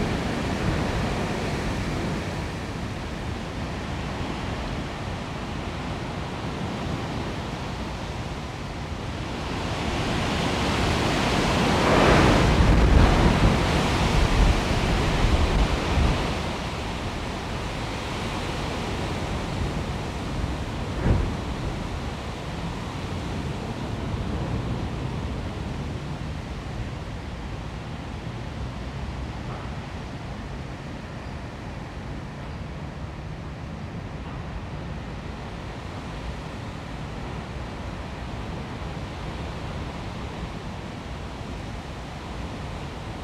London Borough of Hackney, Greater London, UK - Storm 'St Jude', sycamores, gusts and a magpie
The biggest storm in London for years was named 'St Jude' - the patron saint of lost causes. This was recorded from my back window. Most of the sound is wind blowing through two high sycamore trees - some intense gusts followed by a minute or three of relative quiet was the pattern. Planes were still flying over into Heathrow and magpies seemed untroubled.
October 2013